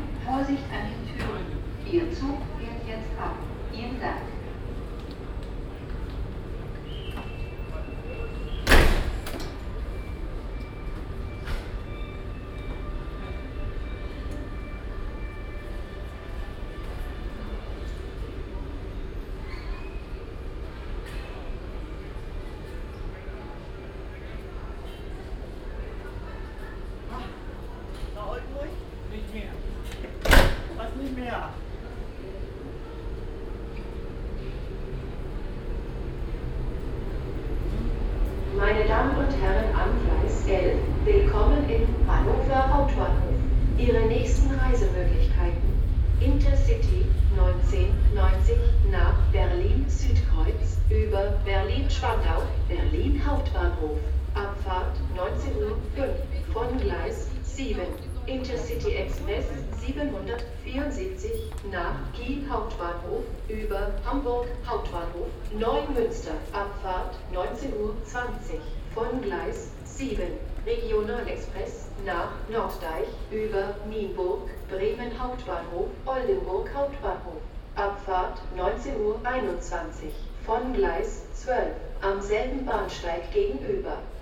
hannover, hbf, gleis 12 ansage
auf den gleisen am frühen abend, eine zugansage
soundmap nrw:
social ambiences, topographic field recordings